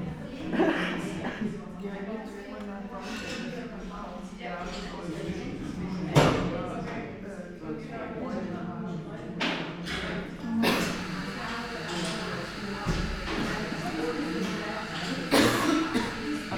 Bergmannstr., Werderscher Kirchhof, Berlin - inside cafe ambience
Sunday afternoon at Cafe Strauss, ambience inside cafe. The atmosphere within the cafe is quite special, it's located within the cemetery building, reminding on a chapel.
(Sony PCM D50, Primo EM172)